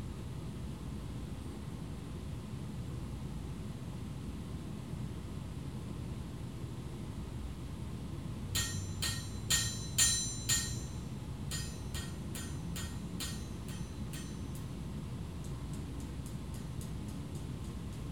Mid-Town Belvedere, Baltimore, MD, USA - Metal Forging
Recorded in the outdoor metalworking area behind Station building where blacksmithing was taking place. You can hear the tinging of hammer on anvil as well as the drone of various machinery outside the building.